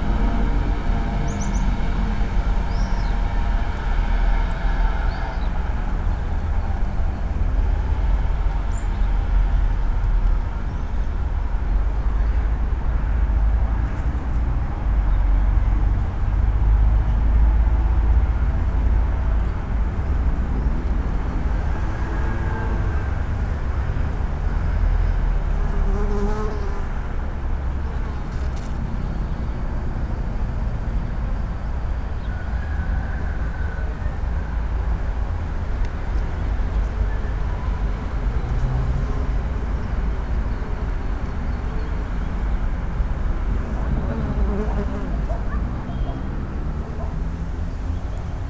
Kos, Greece, meadow
small meadow in the town. I have normalized the recording for louder sounds...
13 April 2016